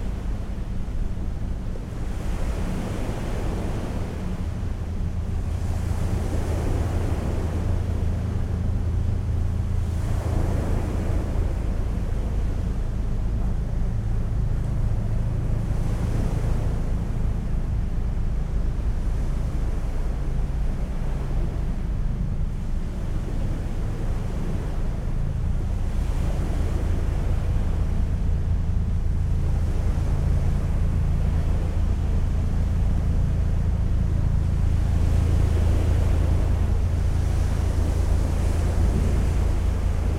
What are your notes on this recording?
sounds of the Baltic shore with almost continuous ship and air traffic